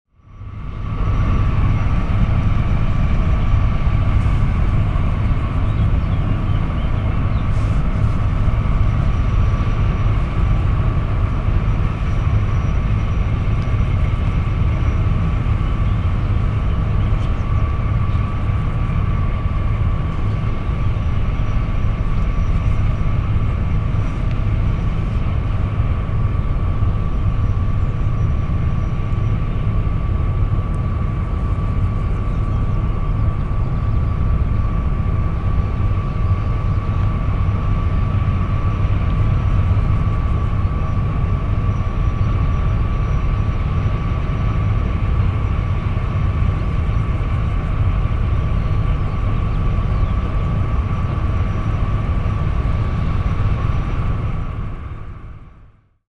Rhyolite Mine
Quartz, rhyolite, mine, Goethe, Background Listening Post
Petersberg, Germany